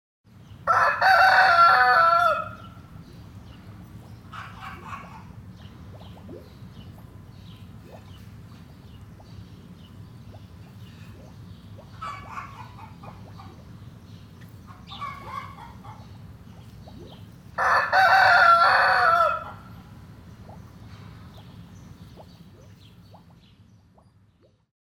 nachtmanderscheid, farm stead
On a farmstead. The classical sound of a rooster calling and some hens walking outside in their outdoor enclosure near a small fountain.
Nachtmanderscheid, Bauernhof
Auf einem Bauernhof. Das klassische Geräusch von einem Hahn, der kräht, und einige Hennen laufen draußen in ihrem Freigehege nahe eines kleinen Brunnens.
Nachtmanderscheid, ferme
Dans une ferme. Le son classique d’un coq qui chante et quelques poules qui courent dans leur enclos à proximité d’une petite fontaine.
Nachtmanderscheid, Luxembourg, September 2011